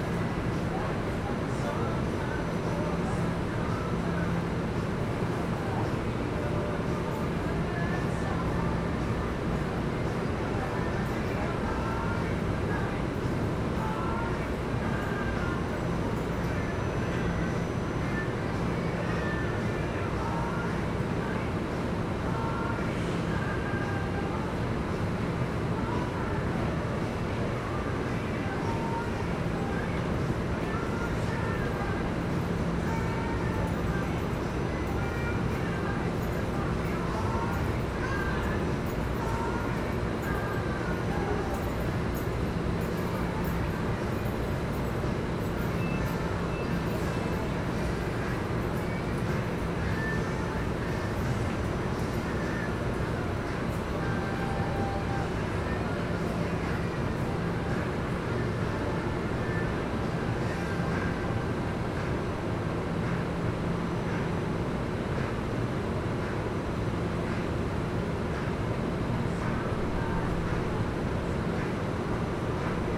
This recording was taken in the heart of the Trenton Transit Center on a balmy Friday evening.
Trenton, NJ, USA, 18 October 2013